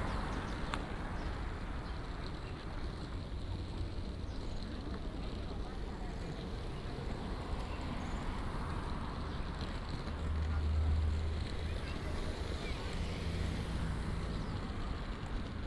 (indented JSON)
{
  "title": "臺灣大學, Zhoushan Rd., Da’an Dist., Taipei City - Follow front trunk",
  "date": "2015-07-28 17:11:00",
  "description": "From the MRT station, Starting from the main road, walking into college, Walking across the entire campus",
  "latitude": "25.02",
  "longitude": "121.54",
  "altitude": "20",
  "timezone": "Asia/Taipei"
}